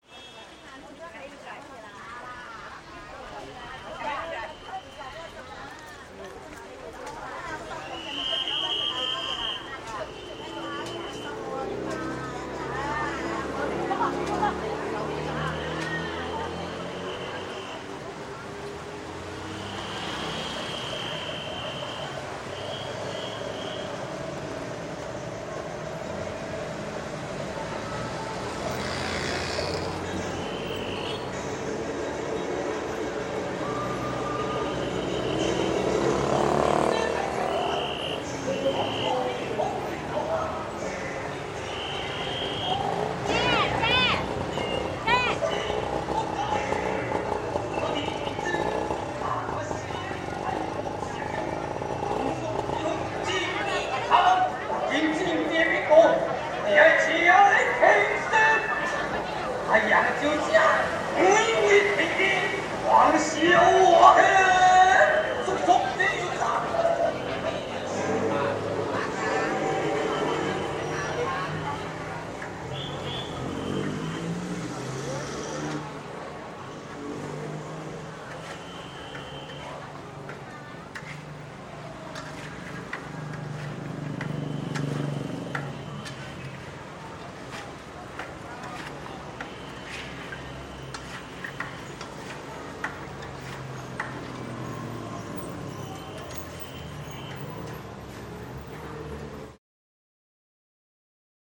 Itsara nuphap, Khwaeng Chakkrawat, Khet Samphanthawong, Krung Thep Maha Nakhon, Thailand - Bangkok Chinatown chinese opera on the street
on the street, people around, a market stand - chinese opera cd being sold